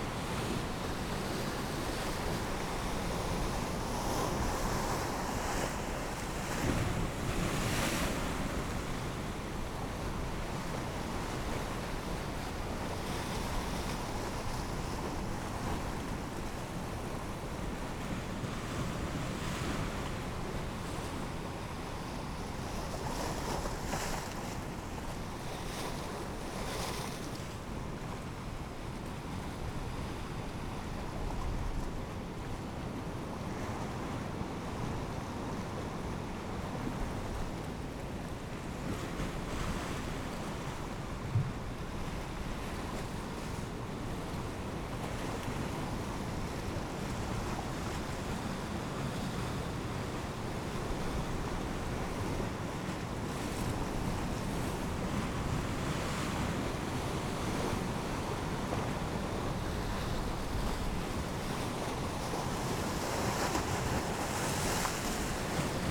East Lighthouse, Battery Parade, Whitby, UK - east pier falling tide ...
east pier falling tide ... dpa 4060s clipped to bag to zoom h5 ...